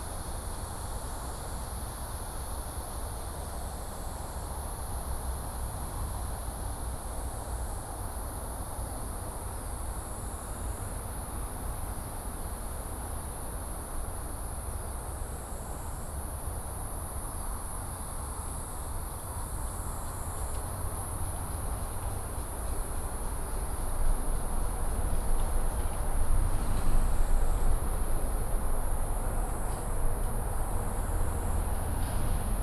Cicada cry, traffic sound, In the bamboo edge